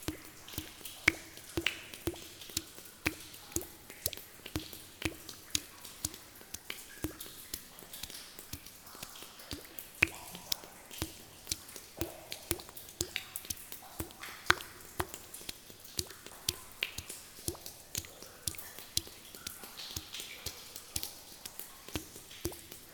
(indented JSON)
{
  "title": "Rumelange, Luxembourg - Hutberg mine fountains",
  "date": "2015-05-23 10:10:00",
  "description": "A walk inside the fountains of the Hutberg underground abandoned mine.",
  "latitude": "49.47",
  "longitude": "6.02",
  "altitude": "400",
  "timezone": "Europe/Luxembourg"
}